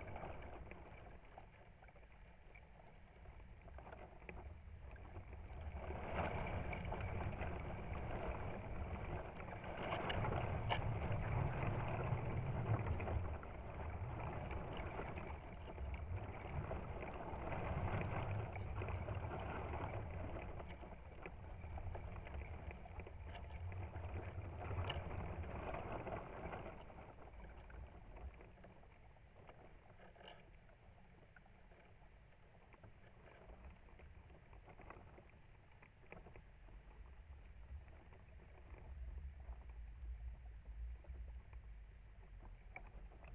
Isle of Mull, UK - Elderberry Tree
I sat under this tree in a comfortable chair for most of my visit to Mull overlooking the loch. As the weather changed throughout the day and night I made recordings of the environment. The tide came in and out various winds arrived along with all the birds and animals visiting the shore. To the left of me were a line of pine trees that sang even with the slightest of breezes, and to the left was a hillside with a series of small waterfalls running down its slope. I became aware of the sounds the branches of the tree were making in the various winds and used a pair of contact mics to make the recording. Sony M10.